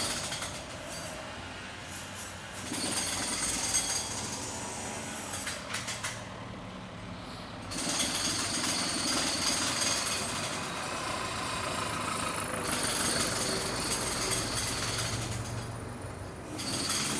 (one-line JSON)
{"title": "london, house refurbishment", "description": "recorded july 18, 2008.", "latitude": "51.49", "longitude": "-0.16", "altitude": "9", "timezone": "GMT+1"}